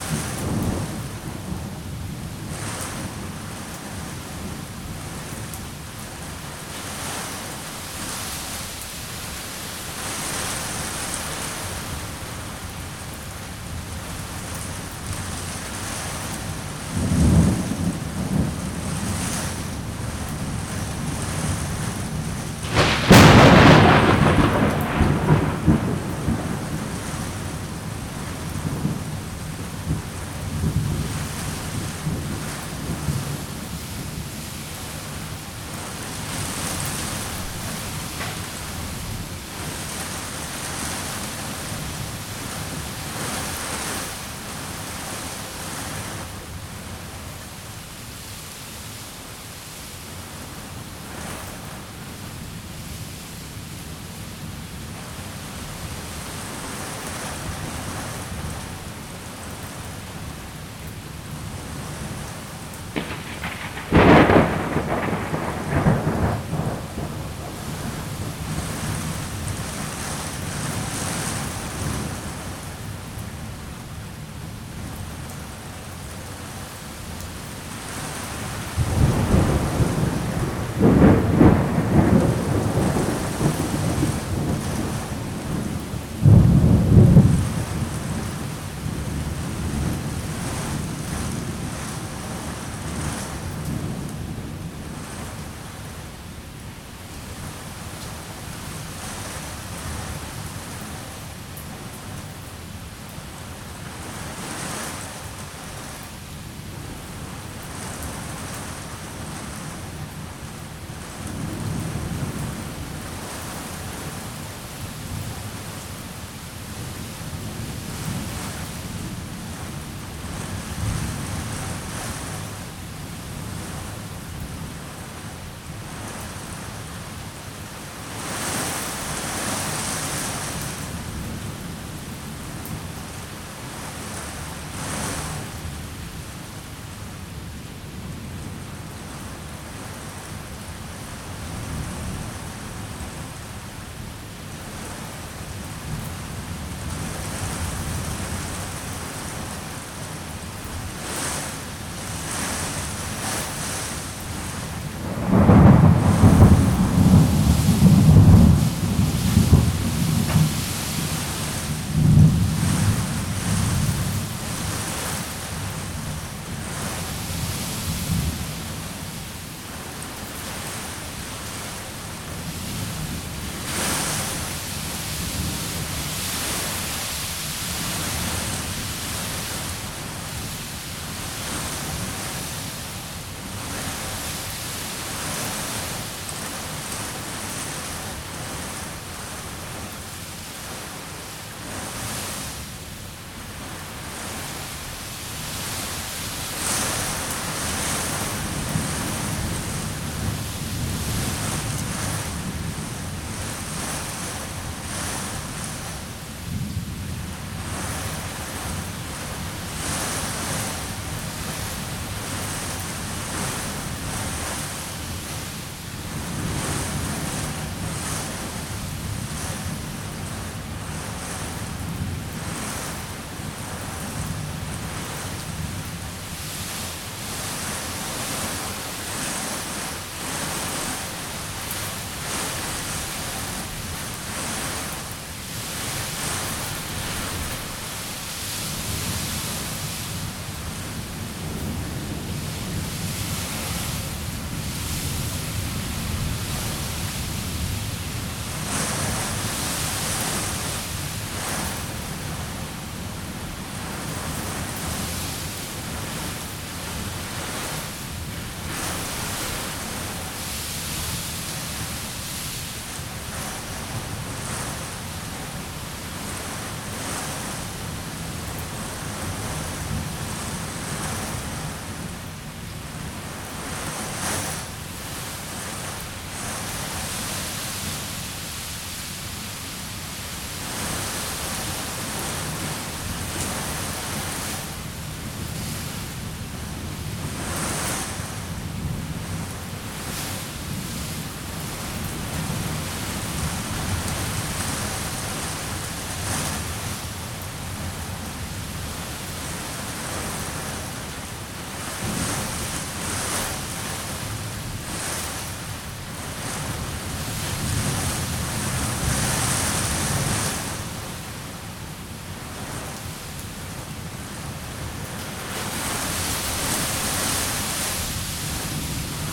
Bauhausplatz, Ziebigk, Dessau-Roßlau, Deutschland - Gewitter am Bauhausplatz | thunderstorm at bauhausplatz
Prasselnder Regen auf einer Balkonüberdachung, der Wind peitsch Regen gegen Gebäude und Bäume, ein großer Birnbaum biegt sich im Wind, Donner |
pattering rain on an balcony canopy, storm blows rain against houses and trees, a big bear bends in the wind, growling thunder